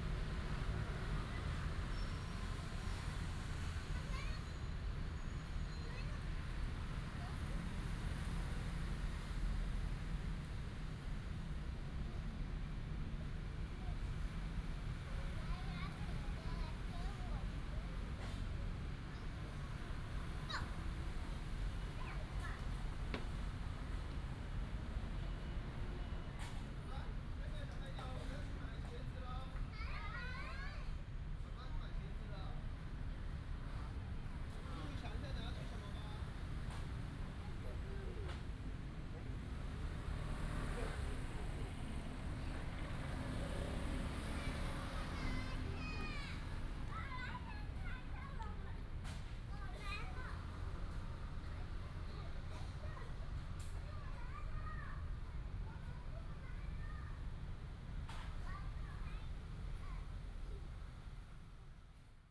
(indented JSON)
{
  "title": "Nanchang Park, Zhongzheng Dist., Taipei City - in the Park",
  "date": "2017-02-03 18:09:00",
  "description": "in the Park, Child, Children's play area, Traffic sound",
  "latitude": "25.03",
  "longitude": "121.52",
  "altitude": "17",
  "timezone": "GMT+1"
}